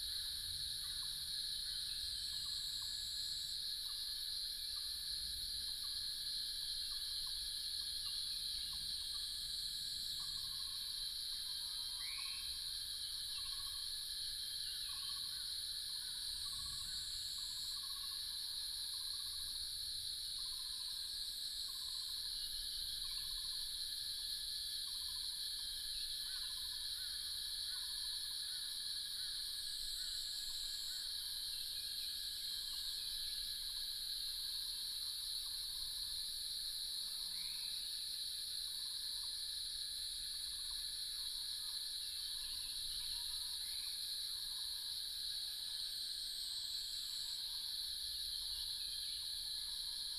油茶園, 魚池鄉五城村, Nantou County - Birds and Cicada sounds
early morning, Birds and Cicada sounds
Yuchi Township, 華龍巷43號